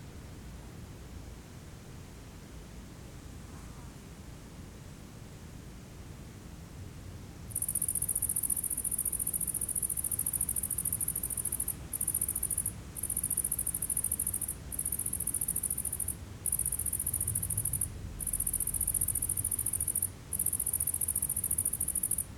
Tartak, Suwałki, Polen - Tartak, Wigry National Park - Meadow with crickets
Tartak - Meadow with crickets. [I used Olympus LS-11 for recording]